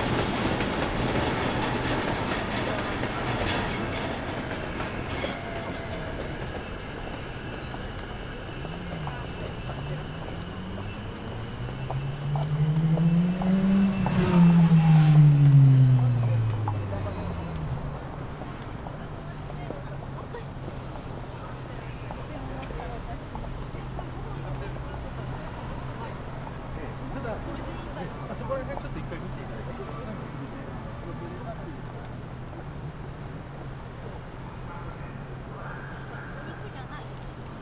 Tokyo, Bunkyō, bridge - full moon contemplation
a few people standing on the bridge, looking a big, full moon on the horizon. busy district, many people walking in all directions, probably because it's close to the Tokyo university. trains arriving at the nearby Ochanomizu station. echoes bouncing off the tall buildings around.
北葛飾郡, 日本, April 2013